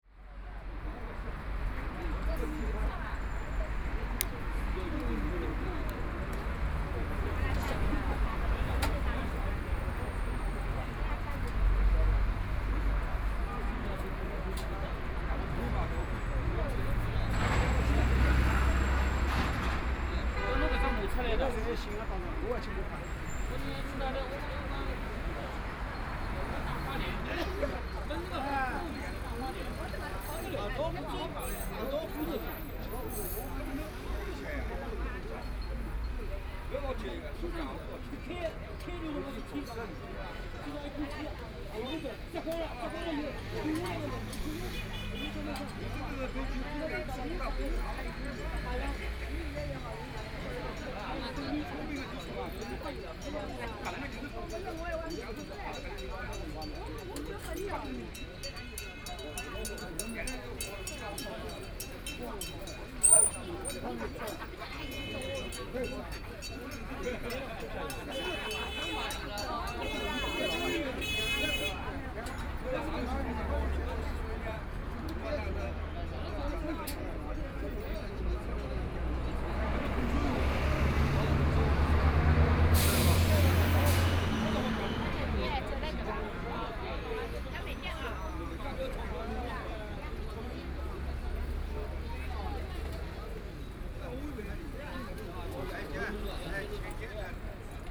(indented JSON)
{
  "title": "Fangbang Road, Shanghai - at the intersection",
  "date": "2013-12-03 13:39:00",
  "description": "The crowd gathered at the intersection of voice conversations, Traffic Sound, Binaural recording, Zoom H6+ Soundman OKM II",
  "latitude": "31.22",
  "longitude": "121.48",
  "altitude": "14",
  "timezone": "Asia/Shanghai"
}